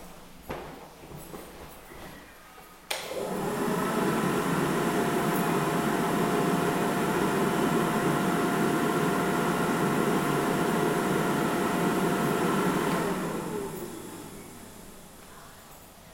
public open air swimming pool - Alf, public open air swimming pool
dressing room, may 31, 2008 - Project: "hasenbrot - a private sound diary"